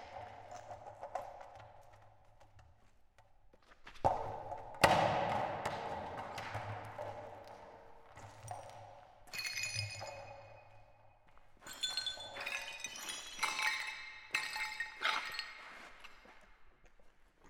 Place de lIndustrie, Amplepuis, France - Amplepuis Feyssel1
Jeux acoustiques dans une usine désaffectée